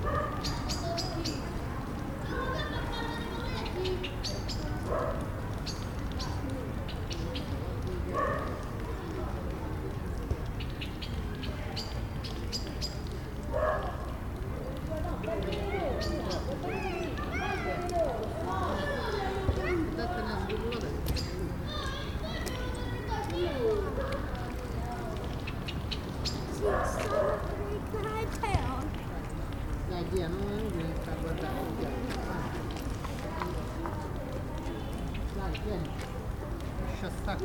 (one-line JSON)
{"title": "Roma, IT, Parco Villa Torlonia - At dawn", "date": "2013-10-11 19:00:00", "description": "At dawn in the park of Villa Torlonia in Rome: ranting blackbirds, dogs, joggers, children, a small babbling well, a plane approaching Roma Ciampino... Tascam RD-2d, internal mics.", "latitude": "41.91", "longitude": "12.51", "altitude": "48", "timezone": "Europe/Rome"}